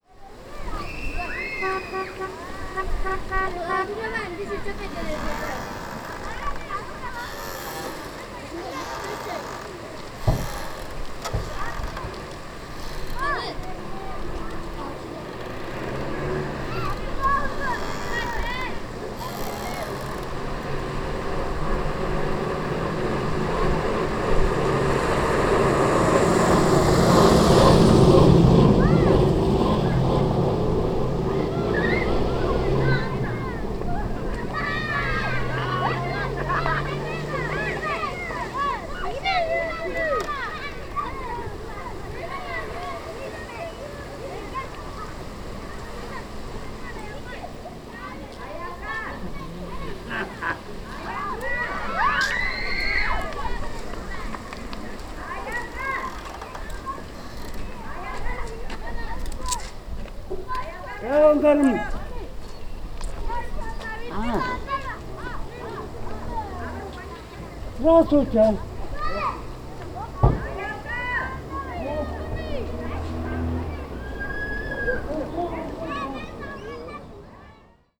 Кызылский, Республика Тыва, Россия - Eerbek township.
Children playing, old man greeting, cars, door scratch. Very windy.
Tech.: Marantz PMD-661 (int mics) wrapped on fleece.